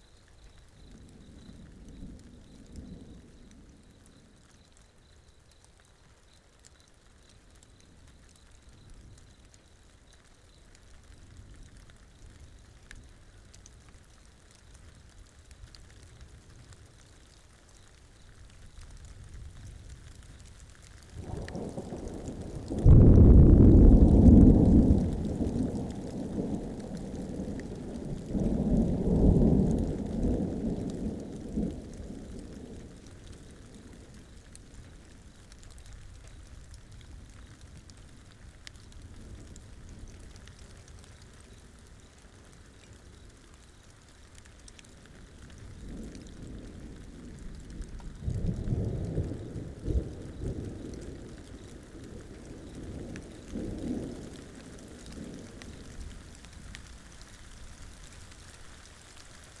Warmbad, South Africa - A Storm while camping
Nokeng Eco Lodge. Equipment set up to record the Dawn Chorus the following day. EM172's on a Jecklin disc to SD702